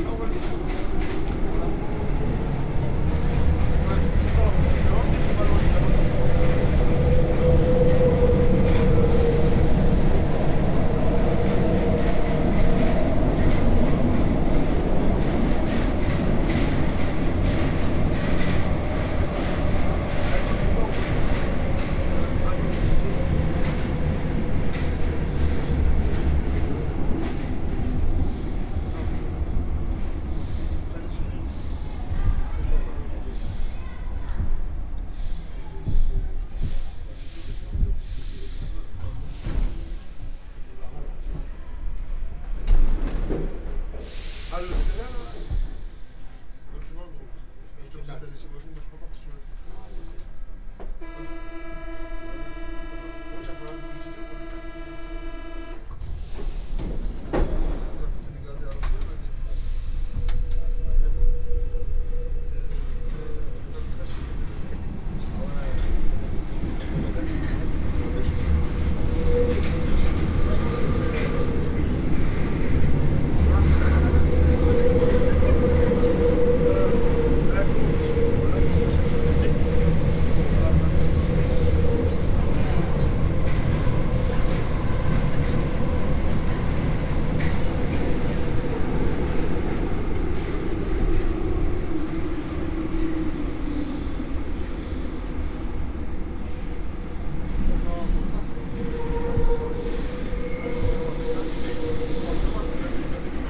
Rue du Bac, Paris, France - (381) Metro ride from Rue du Bac station
Metro ride from Rue du Bac to Concorde station.
recorded with Soundman OKM + Sony D100
sound posted by Katarzyna Trzeciak
France métropolitaine, France, 27 September 2018